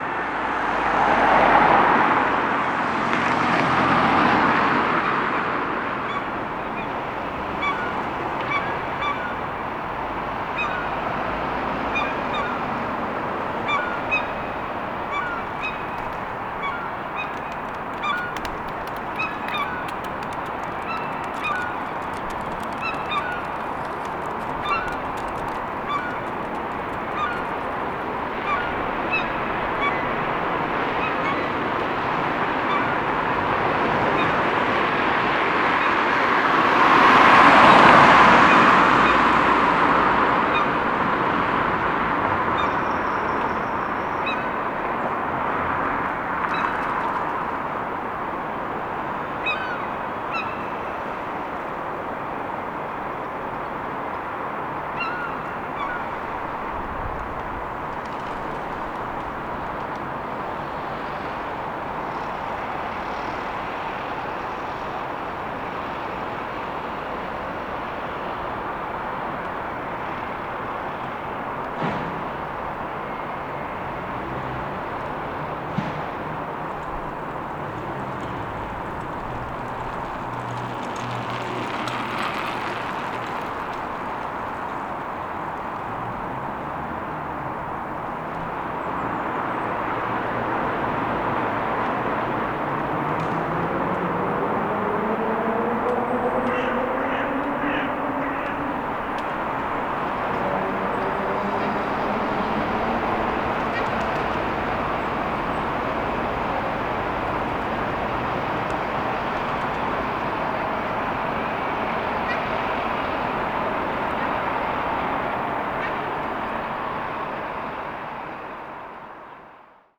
The gigantic cathedral with the park across the street is silenced. No one in sight, only the wind and birds passing by.
Northern Ireland, United Kingdom